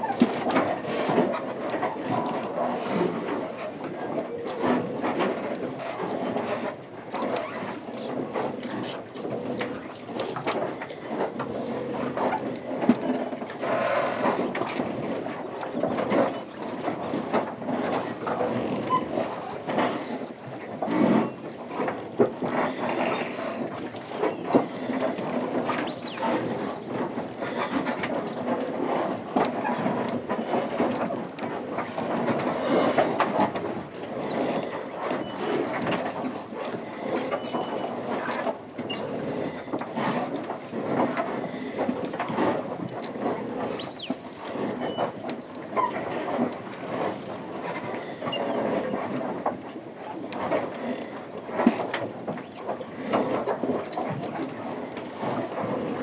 Saint-Martin-de-Ré, France - The marina
The very soft sound of the marina during a quiet low tide, on a peaceful and shiny sunday morning.